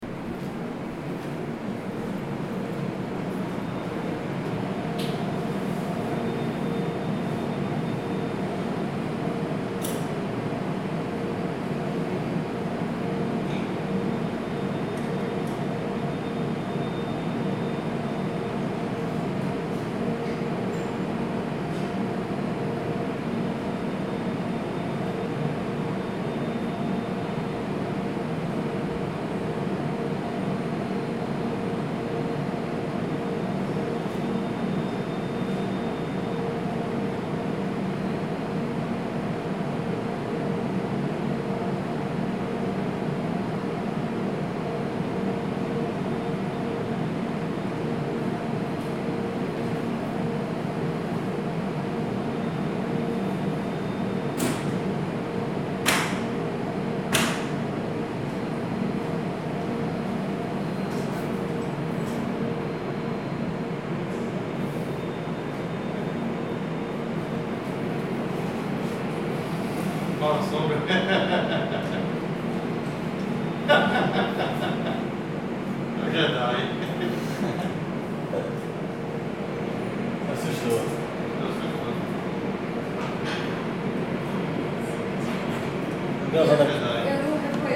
Record by H4n. You can listen constant noisy from machines, people talking and laughting
Brazil, 5 December 2013